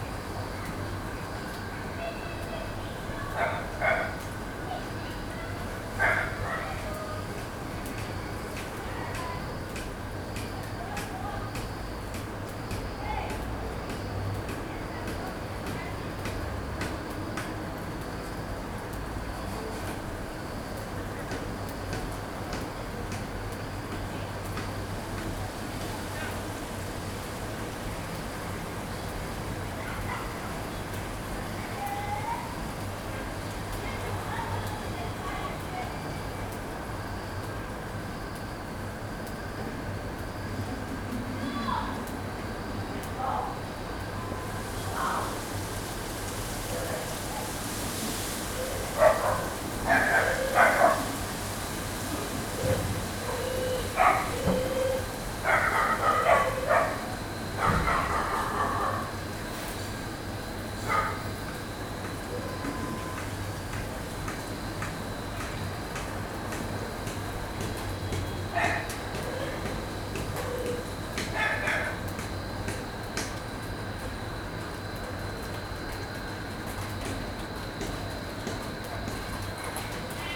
soundscapes of the rainy season...
Lusaka Province, Zambia, 9 December 2018, ~18:00